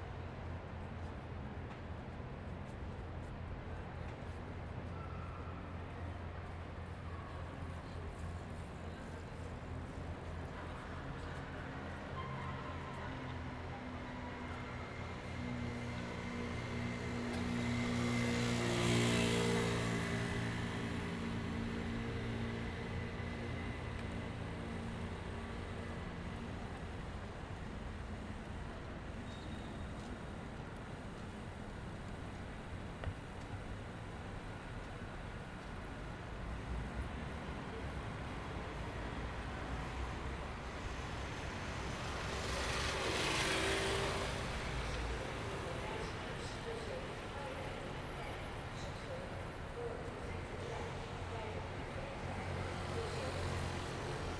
Wasted Time
‘‘Wasted time also changes the concept of wasted as a negative thing. In a creative process it is wasting time that clears the mind or sharpens the mind so creation is possible. ....... But for any kind of occupation it is necessary to alternate working or using time with not working or un-using time.’’